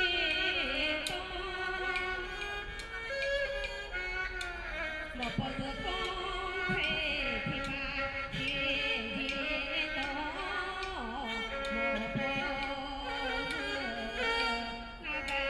Several elderly people are singing traditional music, Erhu, Binaural recordings, Zoom H6+ Soundman OKM II
Shanghai, China, 2 December 2013, 13:32